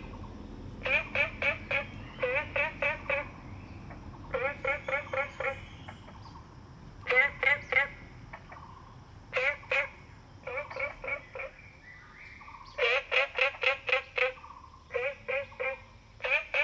蓮華池藥用植物標本園, Nantou County - Frogs chirping
birds and Insects sounds, Ecological pool, Frogs chirping
Zoom H2n MS+XY